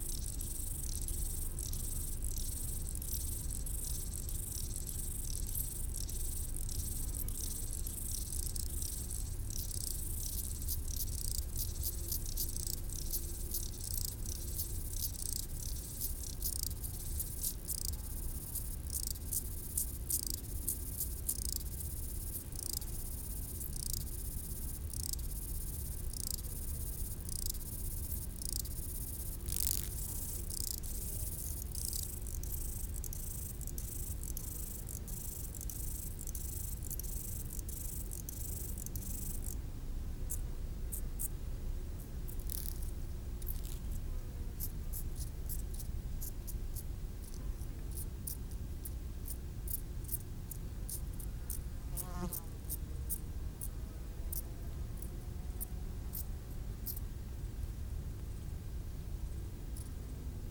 Le Bourget-du-Lac, France - insectes du talus
Route du relais télévision du Mont du Chat à 1500m d'altitude les stridulations des criquets sur le talus et les bruits lointains de la vallée en arrière plan.